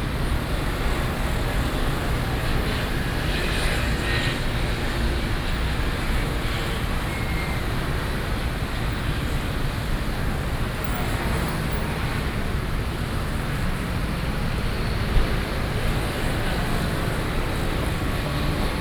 Taipei, Taiwan - Traffic noise
Traffic noise, Sony PCM D50 + Soundman OKM II